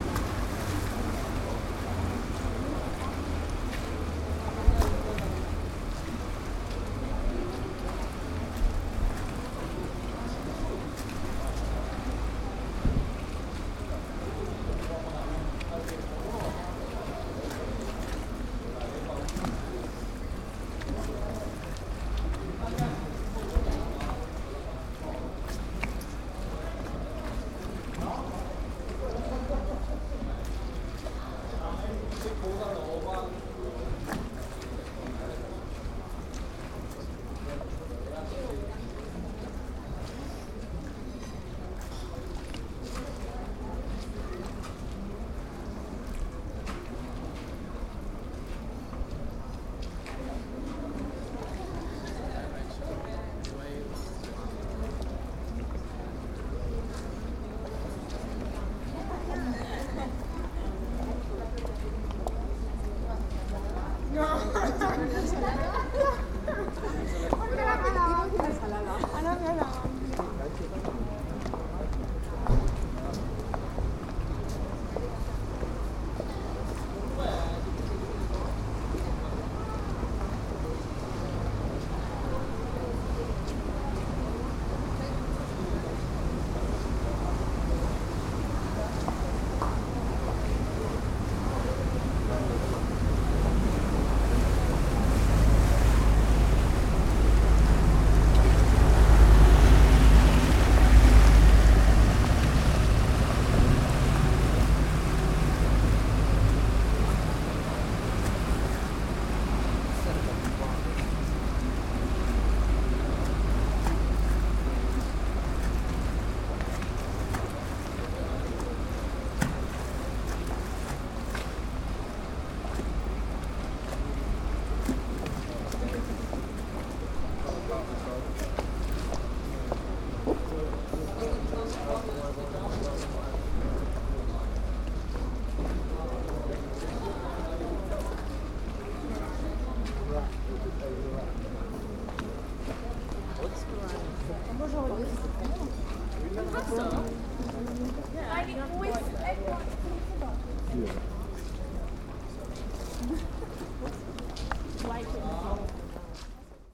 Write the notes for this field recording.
ponte s. antonin, castello, venezia